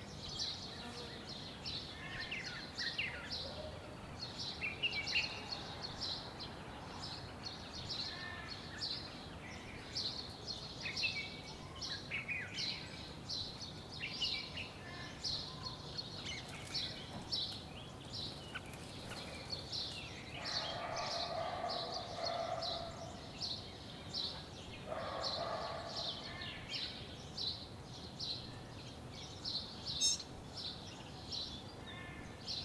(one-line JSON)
{"title": "Ramat Gan, Israel - Morning birds", "date": "2016-03-19 08:54:00", "latitude": "32.06", "longitude": "34.83", "altitude": "56", "timezone": "Asia/Jerusalem"}